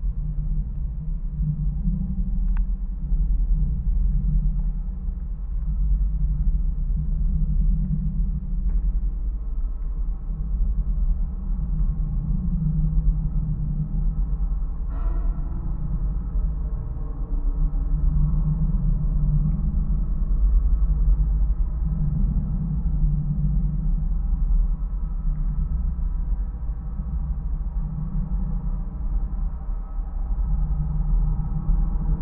Truck passing metal tower Marathon TX
TX, USA, 17 April, 03:20